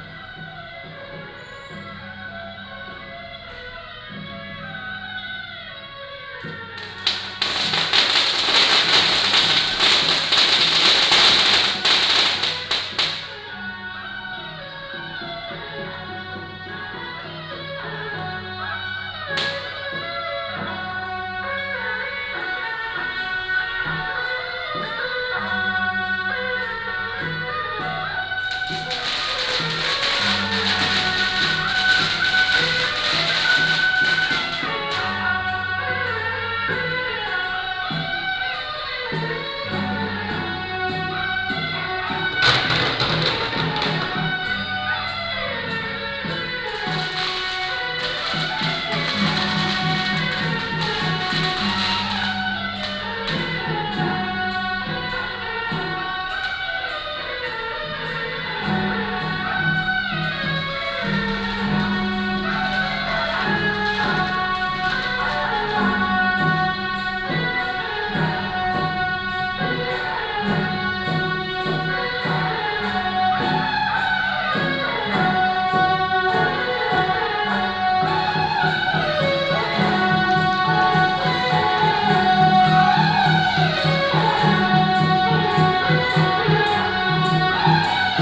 Traditional temple festival parade